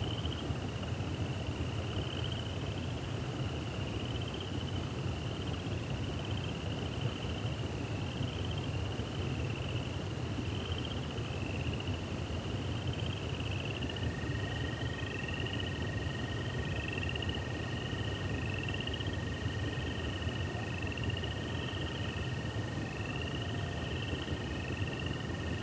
A coyote yelling when a plane is passing by, during the night, in the Tall Grass Prairie. Some cricket are singing too. Sound recorded by a MS setup Schoeps CCM41+CCM8 Sound Devices 788T recorder with CL8 MS is encoded in STEREO Left-Right recorded in may 2013 in Oklahoma, USA.